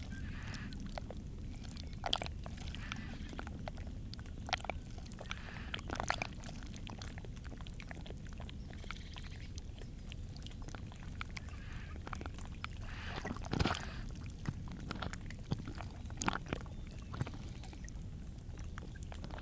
Bernières-sur-Seine, France - Blowing bank
On the Seine bank, there's a little hole in the ground. With waves, curiously the hole is blowing. It's like it were alive.
21 September 2016, 09:00